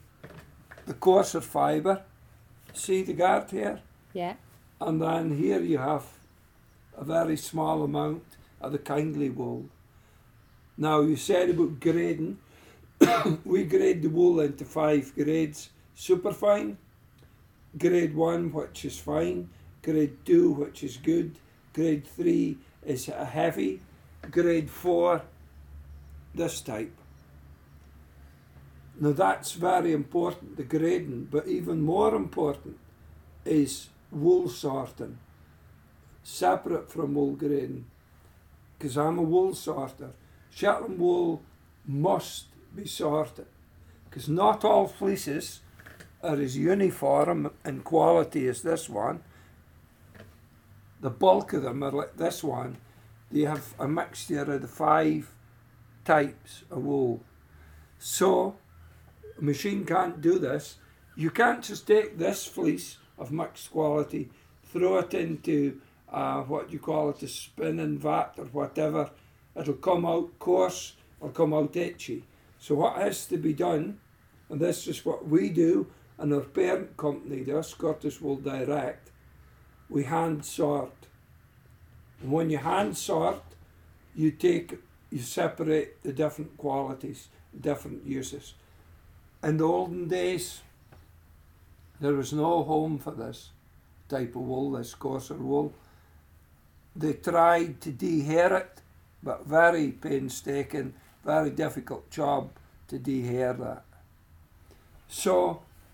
Jamieson & Smith, Shetland Islands, UK - Oliver Henry talking about the history of Shetland Wool
This is Oliver Henry telling me about the history of Shetland wool and talking me through two different types of fleece that have historically been found in the Isles. We were talking in a room towards the back of Jamieson & Smith, with a door open to the docks, so you can hear the gulls outside. He talks about a rougher Shetland wool sheep with "no home" i.e. no real use anywhere in anything. He also talks about "kindly wool" and the importance of soft wool in the economy of crofting. He mentions many of the sorts of garments traditionally made by women in Shetland, and emphasises the importance of the softer wool for their construction. He also describes how the lack of fences in Shetland meant that the two distinct fleeces previously discussed got genetically more and more merged as the sheep ran together and interbred, and then he talks about how the resultant fleeces are mixed, and filled with different finenesses of wool.
6 August 2013, 15:31